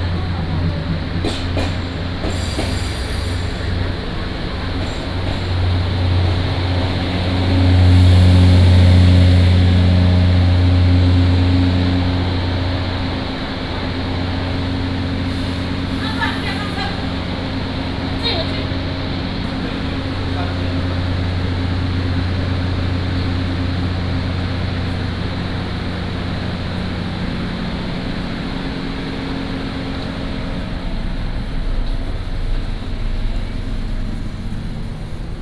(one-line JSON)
{"title": "Yuli Station, Yuli Township - At the station", "date": "2014-10-09 18:22:00", "description": "At the station, From the station hall, Walked into the station platform, Then go into the car", "latitude": "23.33", "longitude": "121.31", "altitude": "137", "timezone": "Asia/Taipei"}